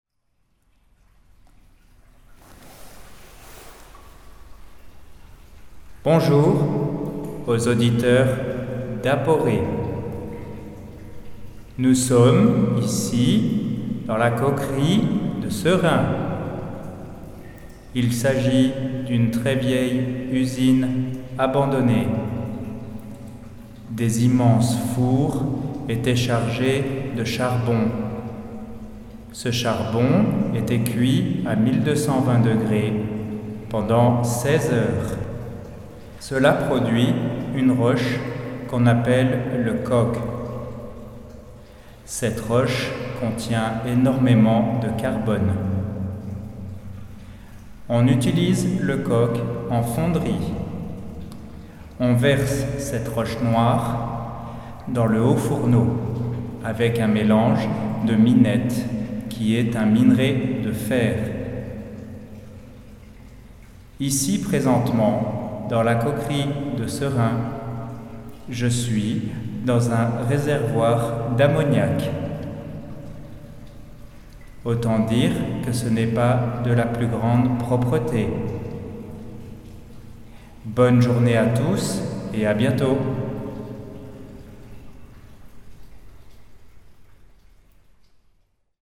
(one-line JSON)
{"title": "Seraing, Belgique - Abandoned tank", "date": "2017-03-18 17:40:00", "description": "In the abandoned coke plant, I'm explaining the factory process in a ammonia tank.", "latitude": "50.61", "longitude": "5.53", "altitude": "67", "timezone": "Europe/Brussels"}